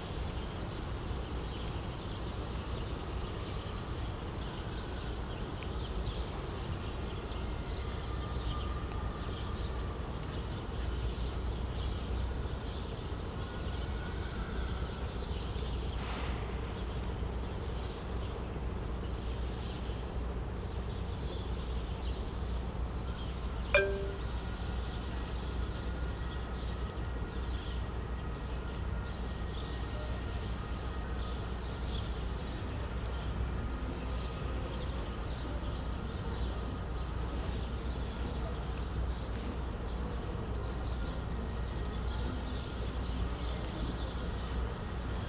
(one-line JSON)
{"title": "Prinzenstraße, Berlin, Germany - Large open space; damp amongst the apartment blocks", "date": "2020-11-17 15:55:00", "description": "This Hinterhof is a huge open space amongst the apartment buildings. Not much is happening except a car motor is continuously idling. It blends indistinguishably into the general city roar. Magpies occasionally chatter and a man shouts in the distance. Towards the end Turkish music plays from the car, but is also lost in the roar. The light is fading and the rain might start again.", "latitude": "52.50", "longitude": "13.41", "altitude": "39", "timezone": "Europe/Berlin"}